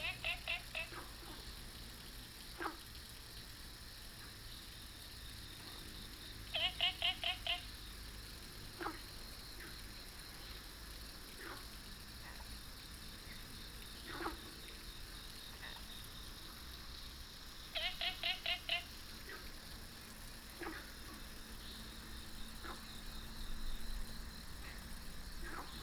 桃米溪, Puli Township - Frogs chirping
Frogs chirping
Binaural recordings
Sony PCM D100+ Soundman OKM II